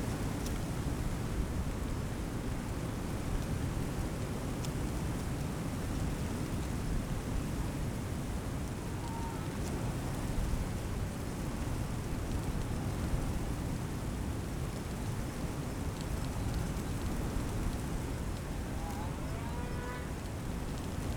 Sunday noon, snow has gone, wind from south-west moves branches and dry leaves of my poplar trees.
(SD702, DPA4060)